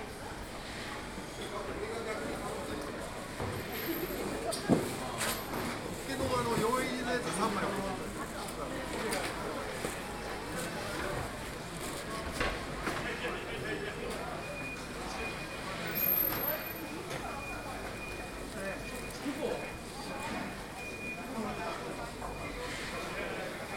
The Tsukiji Fish Market is the stuff of legends; it's where all the fresh fish is purchased early in the morning to become sashimi and sushi later on the same day all over Tokyo. The size of the market and diversity of fish produce is incredible, and there is an amazing sense of many buyers and sellers quietly and efficiently setting about the day's trade. There are lethal little motorised trolleys that zip up and down the slender aisles between the vendors, piled high with boxes of fish. Great band-saws deal with the enormous deep-frozen tuna that come in, and there are squeaky polystyrene boxes everywhere full of recently caught seafood.